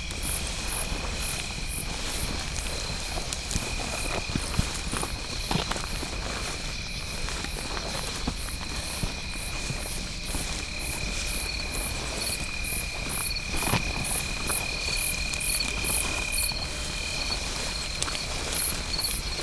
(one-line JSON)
{"title": "Downe, NJ, USA - night field crossing", "date": "2016-08-22 21:30:00", "description": "Crossing a field full of singing insects to reach a pond. Green frogs in pond.", "latitude": "39.25", "longitude": "-75.09", "altitude": "6", "timezone": "America/New_York"}